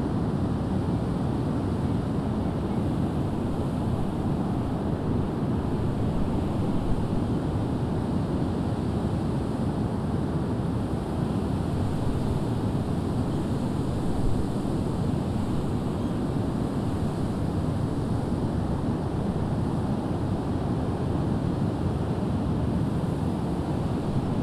Unnamed Road, Prestatyn, UK - Reed Bed and Dunes, Lower Gronant
Late evening recording between reed beds and dunes at Gronant, Clwyd. Recorded on a Tascam DR-40 using the on-board microphones as a coincident pair with windshield.